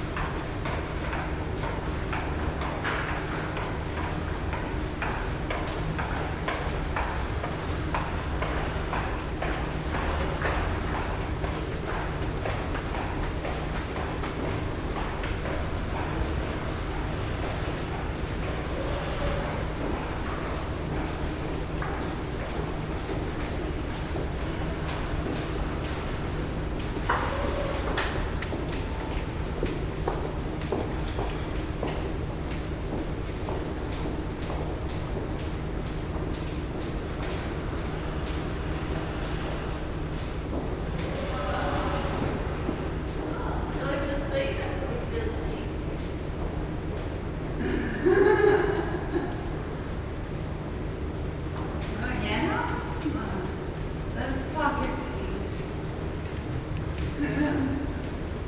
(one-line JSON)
{"title": "Calgary +15 Stock Exchange bridge", "description": "sound of the bridge on the +15 walkway Calgary", "latitude": "51.05", "longitude": "-114.07", "altitude": "1066", "timezone": "Europe/Tallinn"}